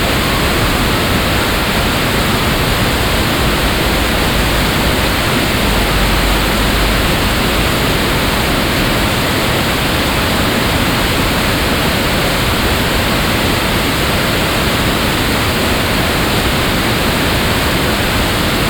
Gruia, Klausenburg, Rumänien - Cluj, Someșul Mic, smal dam
At the river Someșul Mic, that leads through the city of Cluj at a a small dam. The sound of the hissing, speeded up water.
international city scapes - field recordings and social ambiences